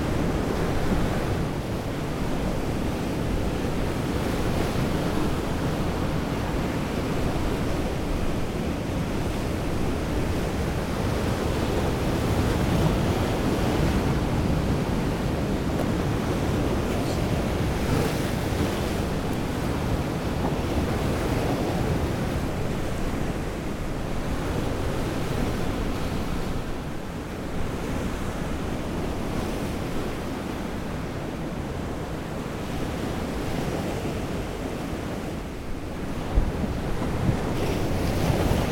Ploumanach, lighthouse, France - Heavy waves on a rock [Ploumanach]
les vagues s'écrasent contre les rochers. distance moyenne.
The waves crash against the rocks. average distance.
April 2019.
22 April 2019, 14:21